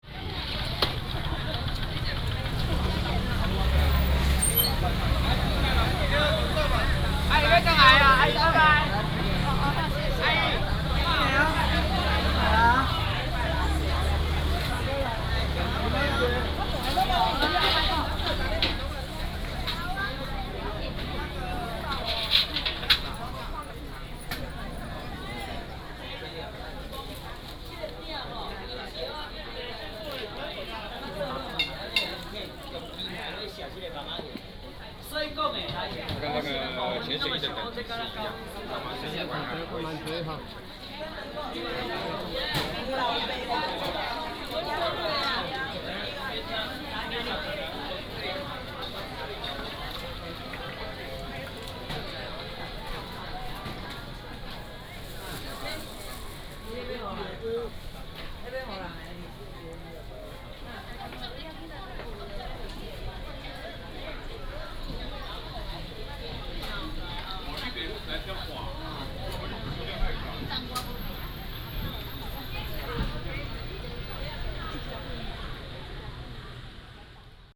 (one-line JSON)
{"title": "Yumin St., North Dist., Tainan City - In the Market", "date": "2017-02-18 10:45:00", "description": "Outdoor market, Traffic sound, Sellers selling sound", "latitude": "23.00", "longitude": "120.20", "altitude": "14", "timezone": "Asia/Taipei"}